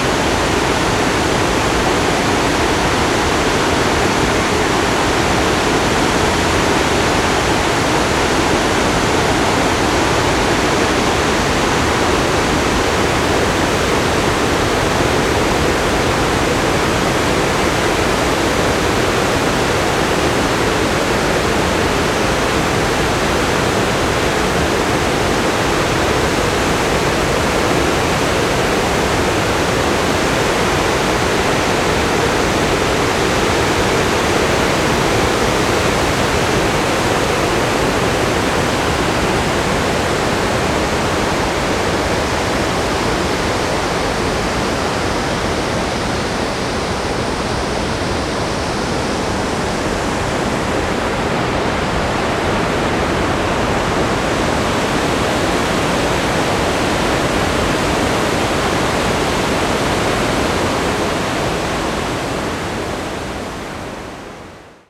Next to dam
Zoom H4n + Rode NT4